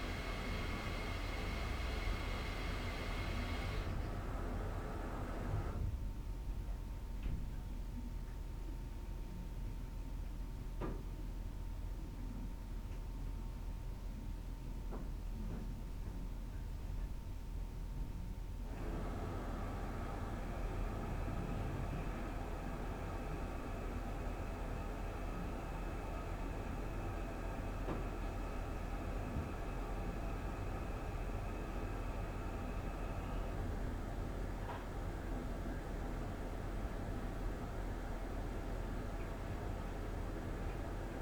tourists in adjacent room got up early. their bathroom was build into our room, walls made out of plywood. sounds of shower and water flowing in pipes at different pressure. various pounding sounds as they move around their room. the recorder wasn't set to high gain so you can imagine how loud the sound of their shower was. interesting sonic experience in a hotel at five in the morning.